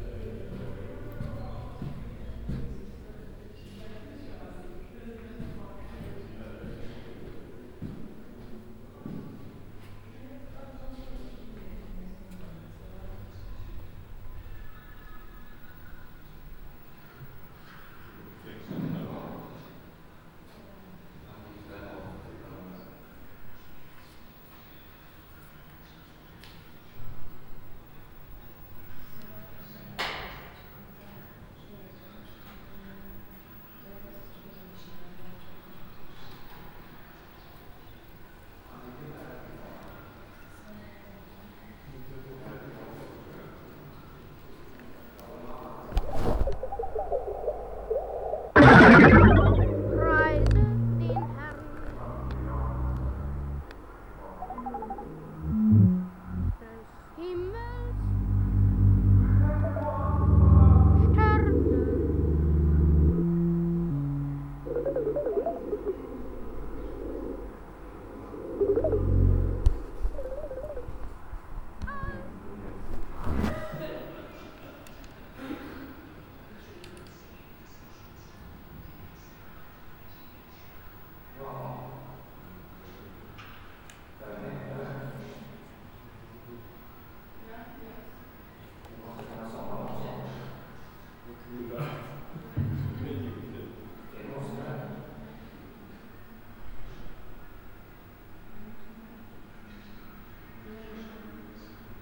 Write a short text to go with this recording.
inside the small art gallery of the cultural venue rafo. sound impression from a media exhibition about danger in the cities, international city scapes - social ambiences, art spaces and topographic field recordings